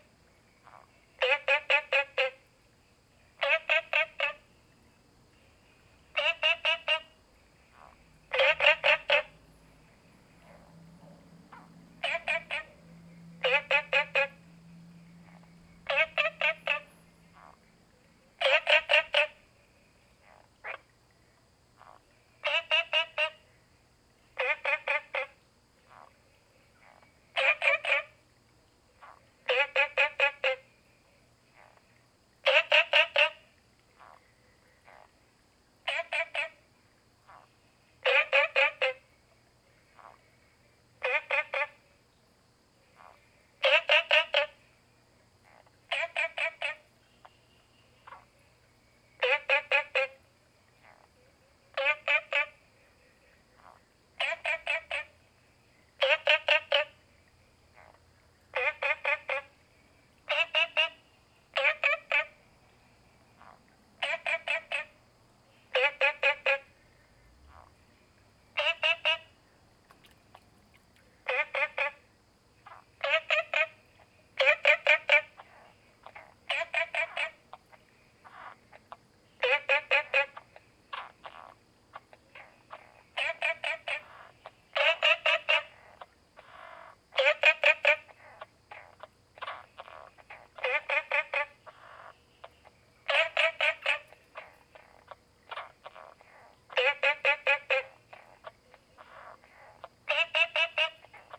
Frogs chirping, at the Hostel
Zoom H2n MS+XY